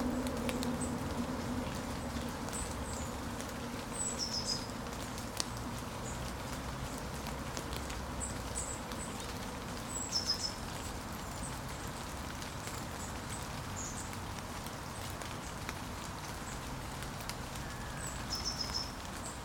Mowbray St, Newcastle upon Tyne, UK - Plantation woodland - City Stadium
Birdsong and falling rain in small area of woodland at the City Stadium, Newcastle upon Tyne. Recorded on a Tascam DR-05 as part of Tyneside Sounds Society Record-A-Thon on 13th October 2019.